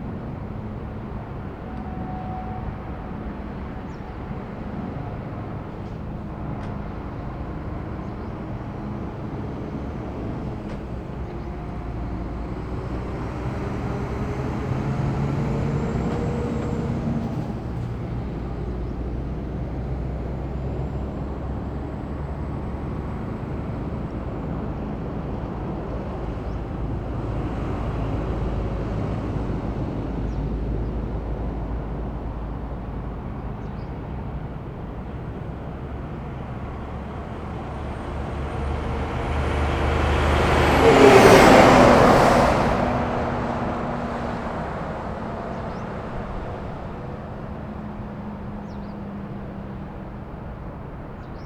osterfeld: autobahnraststätte - the city, the country & me: motorway service area
truck parking area
the city, the country & me: october 14, 2010